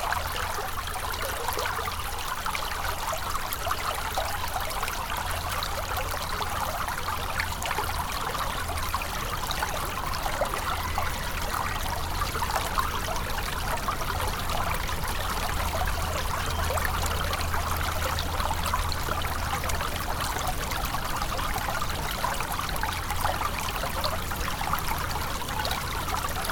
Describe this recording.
A small river into the woods, in a very beautiful and bucolic place.